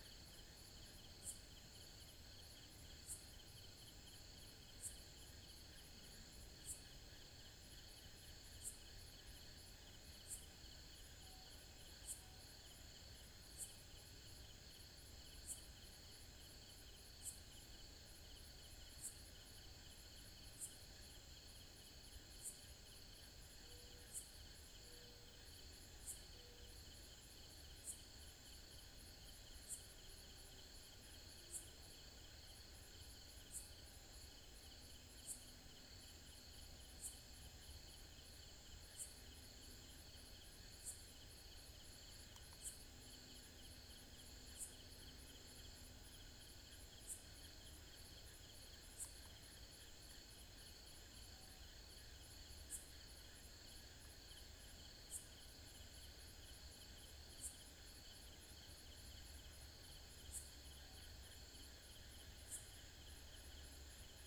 hampi night sounds - night sounds
a bit off the path coming back from a cafe over looking the river at night - feb. 2008
karnatika, india